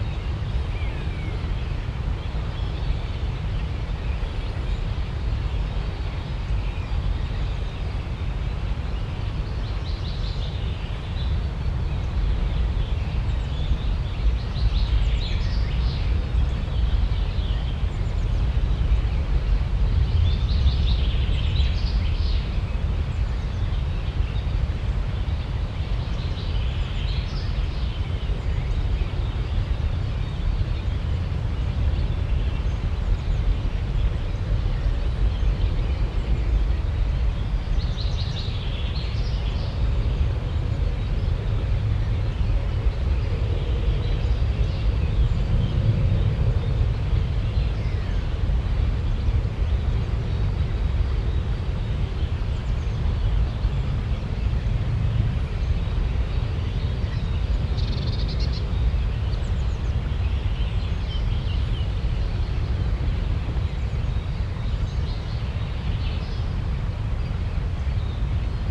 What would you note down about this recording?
im dickicht zwischen gebüschen nahe der anger und bäumen, morgens - kreisender flughimmel - flugachse düsseldorf flughafen, project: :resonanzen - neanderland - soundmap nrw: social ambiences/ listen to the people - in & outdoor nearfield recordings, listen to the people